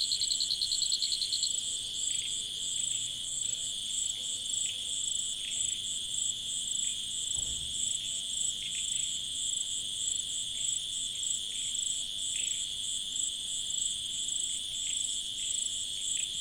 Koforidua, Ghana - Suburban Ghana Soundscapes 2
A part of field recordings for soundscape ecology research and exhibition.
Rhythms and variations of vocal intensities of species in sound.
Recording format AB with Rode M5 MP into ZOOM F4.
Date: 19.04.2022.
Time: Between 10 and 12 PM.
New Juaben South Municipal District, Eastern Region, Ghana